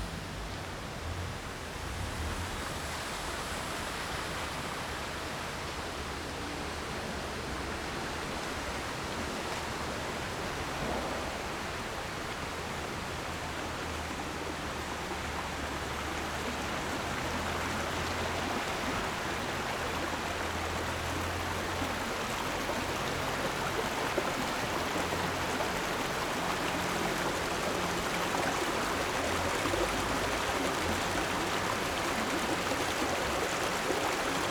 Yongfeng Rd., Tucheng Dist., New Taipei City - the stream
sound of water streams, Beside streams, Traffic Sound
Zoom H4n +Rode NT4